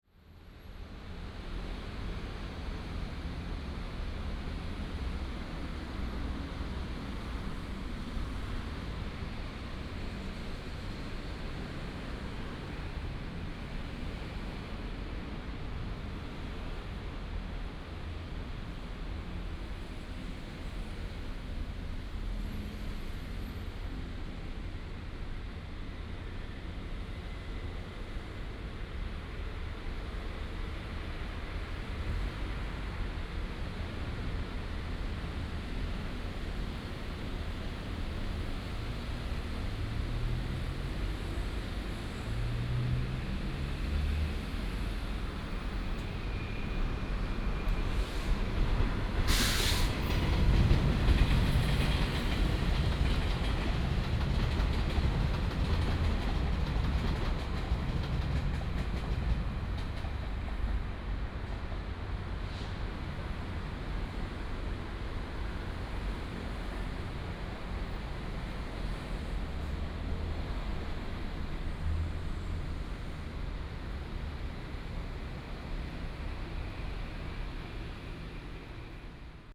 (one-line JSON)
{"title": "Xiping Rd., Douliu City - The train passes by", "date": "2017-03-03 18:21:00", "description": "The train passes by, Traffic sound", "latitude": "23.71", "longitude": "120.54", "altitude": "52", "timezone": "Asia/Taipei"}